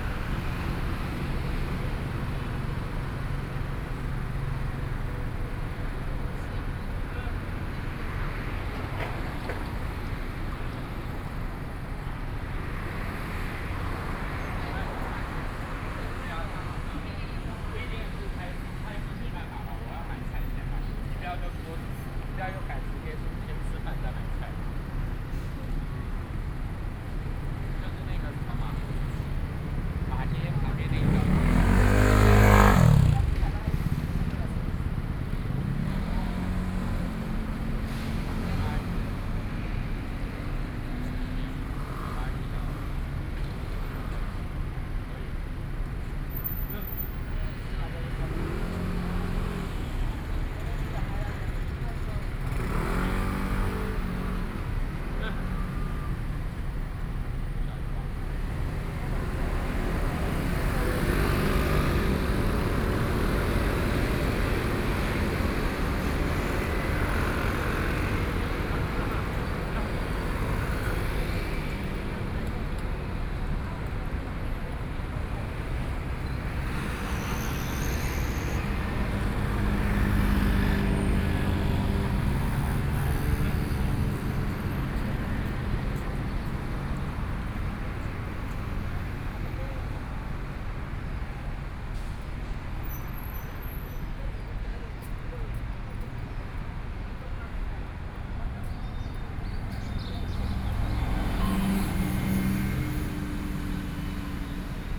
Walking through the park from the corner, Traffic Sound, Walking towards the north direction